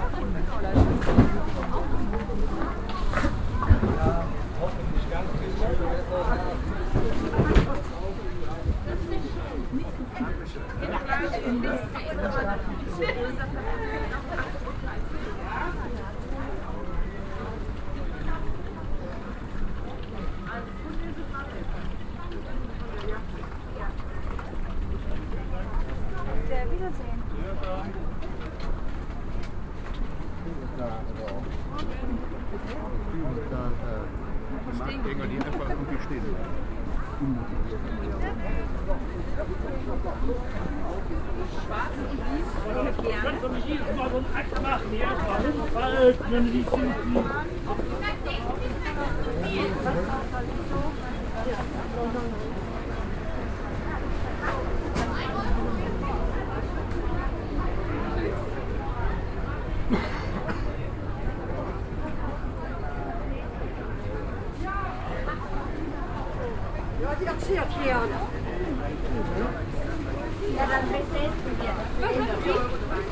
erkrath, markt
project: social ambiences/ listen to the people - in & outdoor nearfield recordings